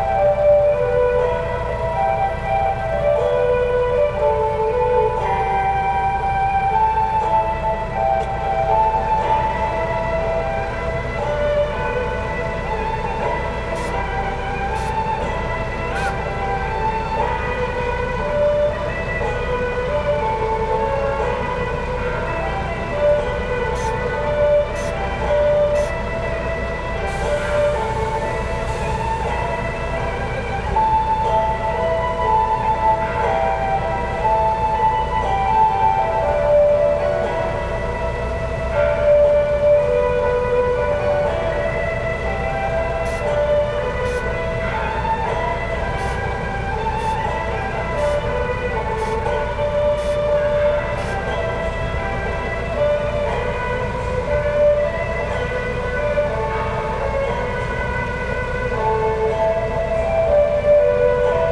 東興宮, Gongliao Dist., New Taipei City - In the temple square
In the temple square, Parking lot, Firecrackers
Zoom H4n+ Rode NT4